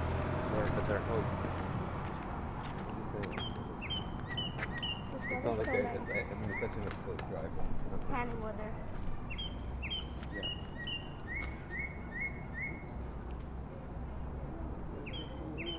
Richview Ave, Toronto, ON, Canada - Cardinal Singing on Sunny Day
Cardinal singing on a sunny day on a quiet street.